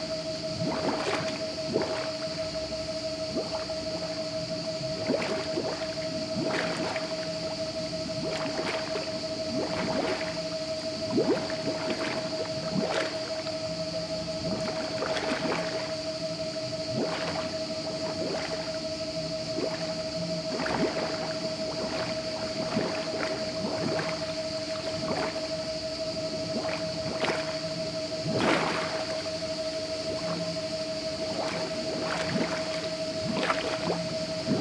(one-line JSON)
{"title": "Saintes-Maries-de-la-Mer, Frankreich - Château d'Avignon en Camargue - Water bubbling in the canal, 'Le domaine des murmures # 1'", "date": "2014-08-14 14:08:00", "description": "Château d'Avignon en Camargue - Water bubbling in the canal, 'Le domaine des murmures # 1'.\nFrom July, 19th, to Octobre, 19th in 2014, there is a pretty fine sound art exhibiton at the Château d'Avignon en Camargue. Titled 'Le domaine des murmures # 1', several site-specific sound works turn the parc and some of the outbuildings into a pulsating soundscape. Visitors are invited to explore the works of twelve different artists.\nIn this particular recording, you will hear the sound of water bubbling in one of the canals, the drone of the water pump from the machine hall nearby, the chatter and laughter of some Italian visitors as well as the sonic contributions of several unidentified crickets, and, in the distance, perhaps some sounds from art works by Julien Clauss, Emma Dusong, Arno Fabre, Franck Lesbros, and, last but not least, the total absence of sound from a silent installation by Emmanuel Lagarrigue in the machine hall.\n[Hi-MD-recorder Sony MZ-NH900, Beyerdynamic MCE 82]", "latitude": "43.56", "longitude": "4.41", "altitude": "9", "timezone": "Europe/Paris"}